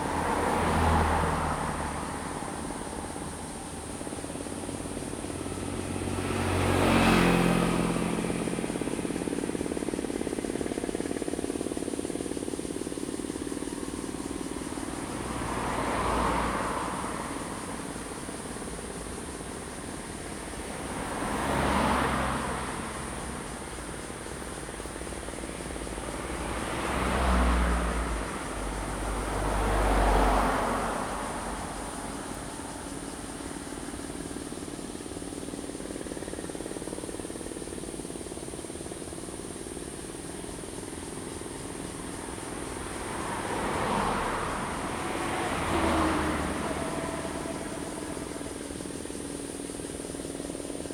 {
  "title": "銅門村, Sioulin Township - In the side of the road",
  "date": "2014-08-28 09:58:00",
  "description": "In the side of the road, Traffic Sound, Cicadas sound, Construction Sound, Hot weather\nZoom H2n MS+XY",
  "latitude": "23.95",
  "longitude": "121.51",
  "altitude": "150",
  "timezone": "Asia/Taipei"
}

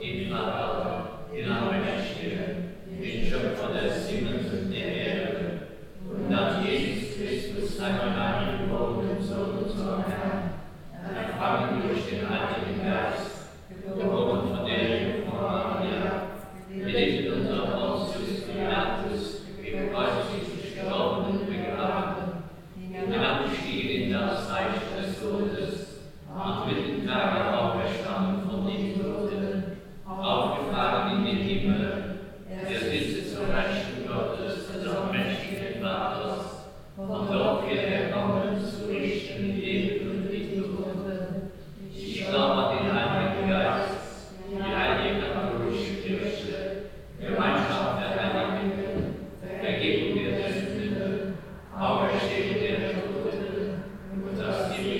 {
  "title": "michelau, church, mass",
  "date": "2011-08-10 16:06:00",
  "description": "Inside the church foyer during the sunday morning mass. The Vater unser Prayer.\nMichelau, Kirche, Messe\nIm Kirchenvorraum während der Sonntagsmesse. Das Vater-Unser-Gebet.\nMichelau, église, messe\nA l’intérieur du foyer de l’église durant l’office le dimanche matin. Le Notre-Père.",
  "latitude": "49.90",
  "longitude": "6.09",
  "altitude": "225",
  "timezone": "Europe/Luxembourg"
}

